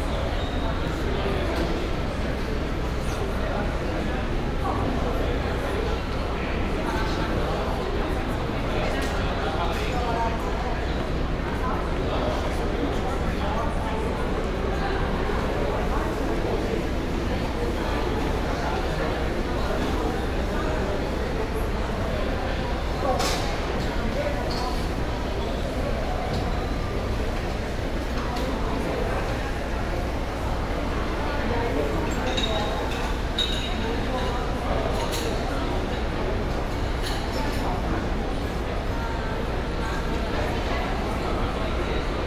Sao Paulo, Frei Caneca Shopping Centre, cafe